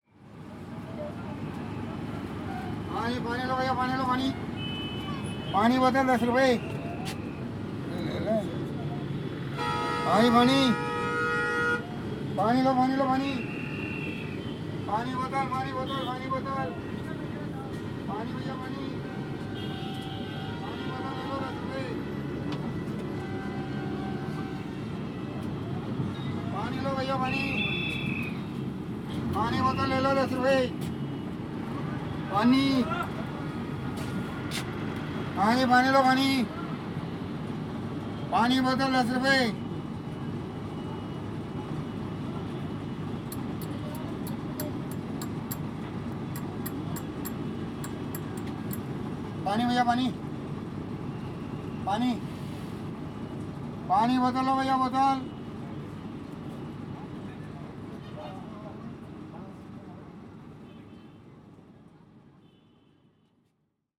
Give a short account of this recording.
Water salesman in a sleeper bus. Zoom H2n + Soundman OKM